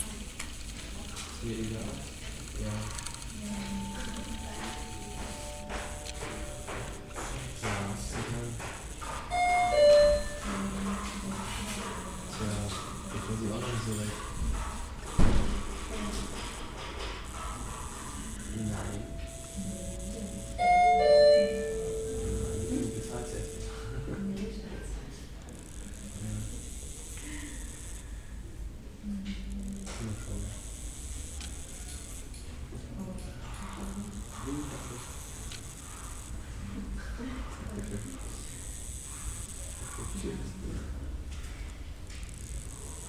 Beuth - Hochschule für Technik - Studienberatung
Germanys universities bureaucracy